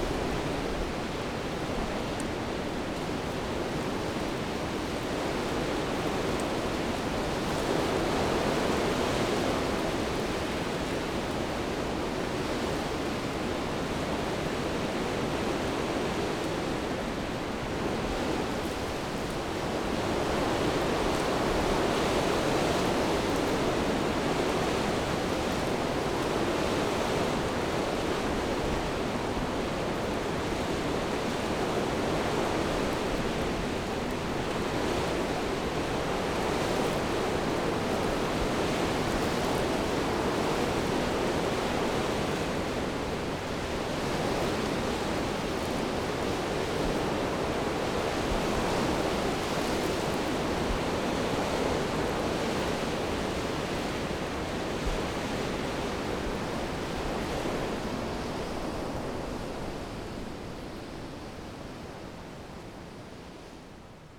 The sound of the wind and the trees
Zoom H2n MS+XY